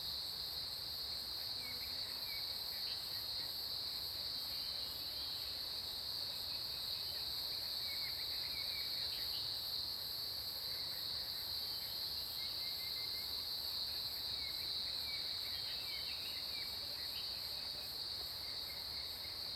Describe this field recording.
Cicada sounds, Bird calls, Frog chirping, Early morning, Zoom H2n MS+XY